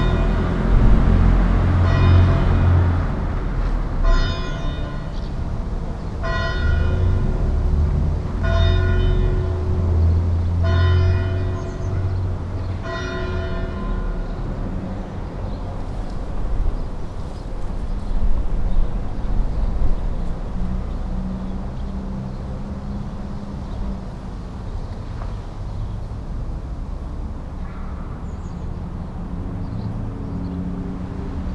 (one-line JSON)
{"title": "Florac, Rue du Rempart, the bells.", "date": "2011-07-14 19:08:00", "description": "Florac, Rue du Rempart, the bells\nFanfare in the background for the 14th of July", "latitude": "44.32", "longitude": "3.59", "altitude": "556", "timezone": "Europe/Paris"}